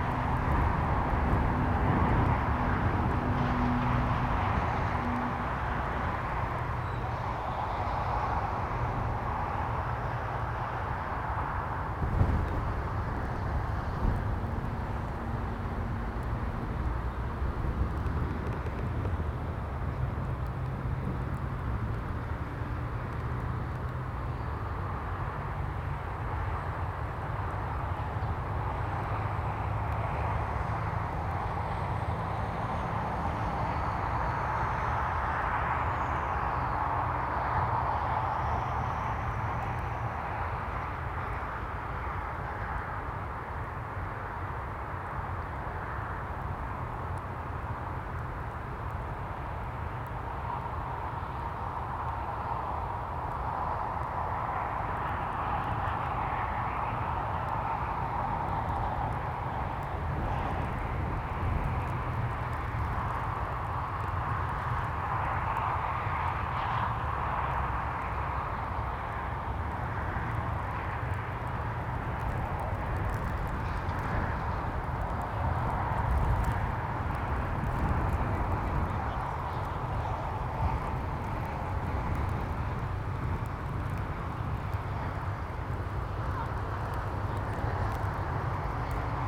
Contención Island Day 36 inner south - Walking to the sounds of Contención Island Day 36 Tuesday February 9th
The Drive Moor Crescent High Street Dukes Moor
Open grass
alternating sun
and wind driven snow squalls
the far hill disappears in the blizzard
Walkers throw a ball
for their enthusiastic dog